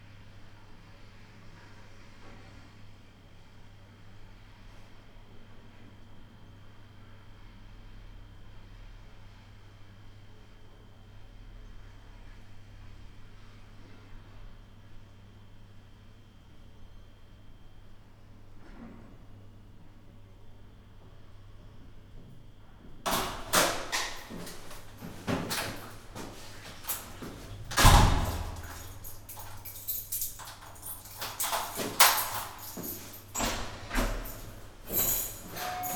Poznan, General Maczek's street - elevator
waiting for and riding the elevator to the sixth floor. one of the tenants suddenly walked out of the basement, adding nice touch to the recording with key jingle and door slam.
15 October 2012, 10:08